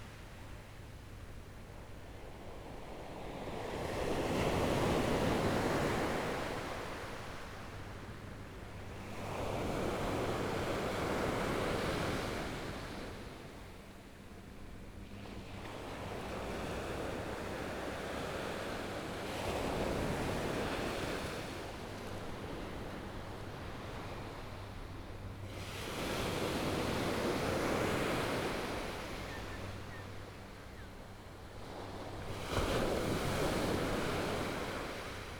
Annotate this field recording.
Sound waves, Please turn up the volume, Binaural recordings, Zoom H4n+ Soundman OKM II + Rode NT4